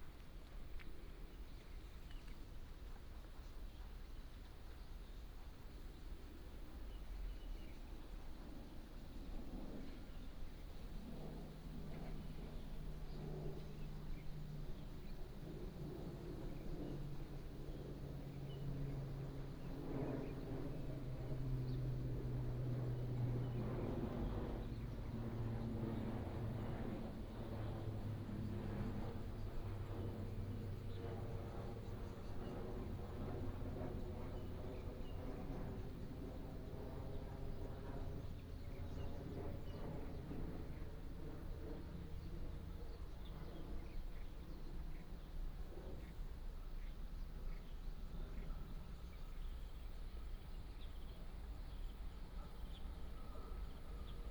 新農街501巷, Yangmei Dist., Taoyuan City - Near the railroad tracks
Birds sound, train runs through, Traffic sound, The plane flew through, Near the railroad tracks
11 August 2017, 17:31